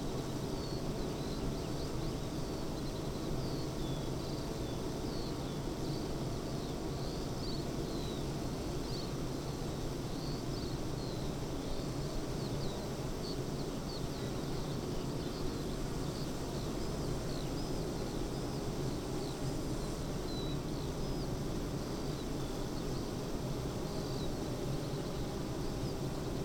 Green Ln, Malton, UK - bee hives ...
bee hives ... eight bee hives in pairs ... xlr SASS to Zoom H5 ... pollinating field of beans ..? produce 40lbs of honey per acre ..? bird song ... call ... skylark ... corn bunting ...
25 June 2020, 06:40